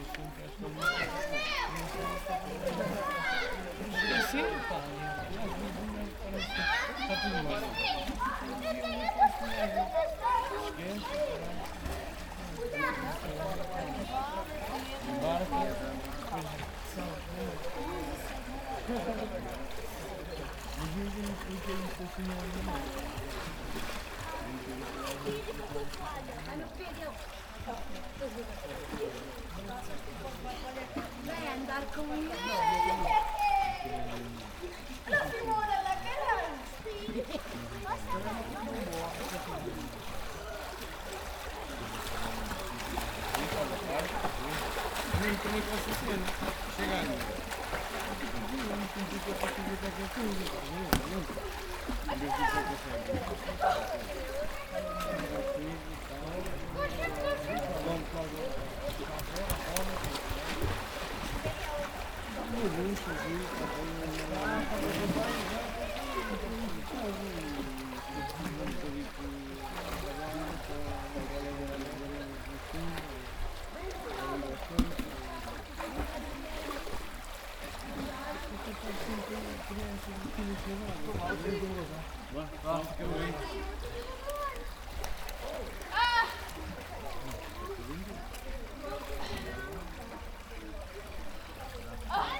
Lousã, Portugal, Piscina Municipal, Swimming pool ambient
swimming_pool. water, people talking, kids, people swimming, birds
21 June 2011, ~18:00